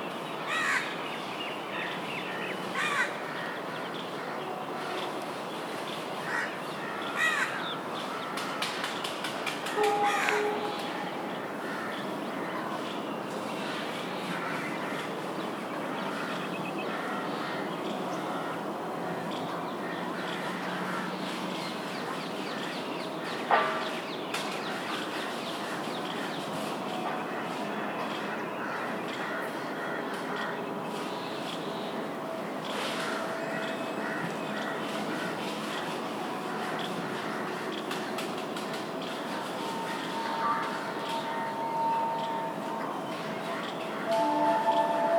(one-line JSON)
{"title": "Bu Halimas Tomb, New Delhi, India - 02 Bu Halimas Tomb", "date": "2016-01-11 09:44:00", "description": "Morning soundscape: birds, workers, distant trains etc.\nZoom H2n + Soundman OKM", "latitude": "28.59", "longitude": "77.25", "altitude": "217", "timezone": "Asia/Kolkata"}